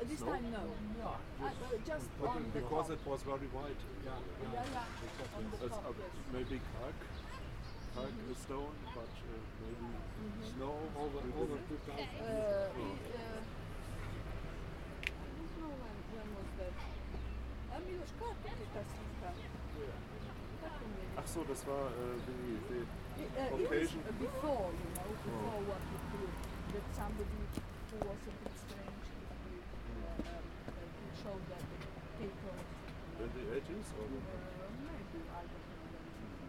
{"title": "Trg Evrope/Piazza Transalpina - Random tourists conversation", "date": "2017-06-09 10:30:00", "description": "Random tourists conversation with one leg in Slovenia and the other in Italy", "latitude": "45.96", "longitude": "13.63", "altitude": "91", "timezone": "Europe/Ljubljana"}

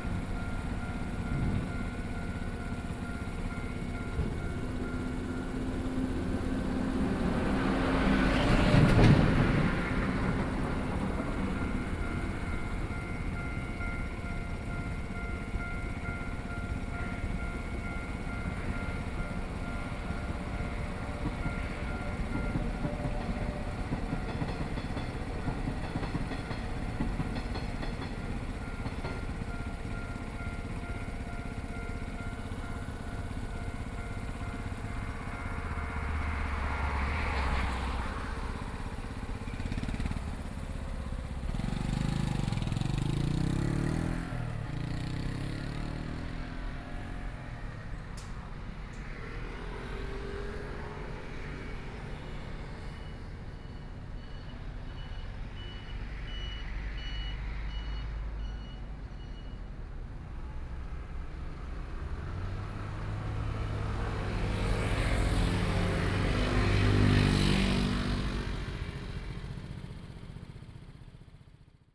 {"title": "Sugang Rd., Su'ao Township - Trains traveling through", "date": "2014-07-28 14:00:00", "description": "At the roadside, Traffic Sound, Hot weather, Trains traveling through", "latitude": "24.59", "longitude": "121.84", "altitude": "12", "timezone": "Asia/Taipei"}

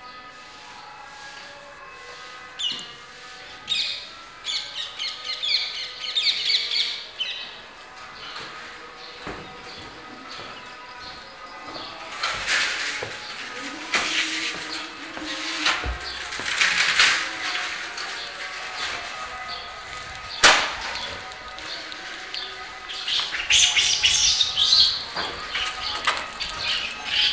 {"title": "Parikrama Marg, Keshi Ghat, Vrindavan, Uttar Pradesh, Indien - at night in Vrindavan", "date": "1996-03-04 22:30:00", "description": "a magical night in Vrindavan, staying on the roof of a small temple and enjoy listening ..recorded with a sony dat and early OKM mics.", "latitude": "27.59", "longitude": "77.70", "altitude": "179", "timezone": "Asia/Kolkata"}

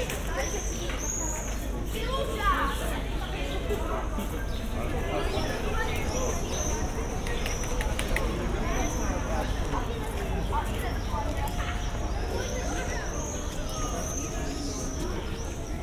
{"title": "Ostrów Tumski, Wrocław, Poland - (827 BI) Flute, bells, swifts", "date": "2021-07-10 15:06:00", "description": "Recording of walkthrough Ostrów Tumski with a flute player, swarming swifts and some bells.\nRecorded supposedly on the Sennheiser Ambeo headset on an iPhone.", "latitude": "51.11", "longitude": "17.05", "altitude": "124", "timezone": "Europe/Warsaw"}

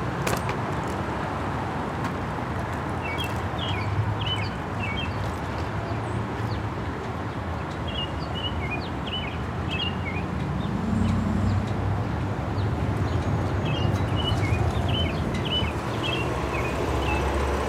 {"title": "Ave Tunnel, New York, NY, USA - A bird singing in the United Nations front garden.", "date": "2022-04-01 15:50:00", "description": "A bird is singing on top of a tree in the United Nations Headquarters front garden amidst the sound of traffic.", "latitude": "40.75", "longitude": "-73.97", "altitude": "15", "timezone": "America/New_York"}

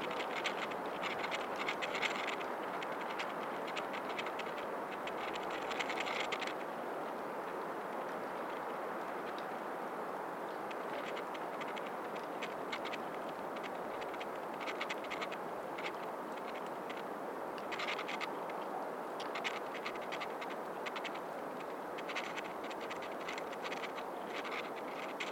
{"title": "Utena, Lithuania, close to dried leaves", "date": "2021-01-31 15:10:00", "description": "windy winter day. dried, curled up leaves on a branch. small mics close up", "latitude": "55.52", "longitude": "25.58", "altitude": "106", "timezone": "Europe/Vilnius"}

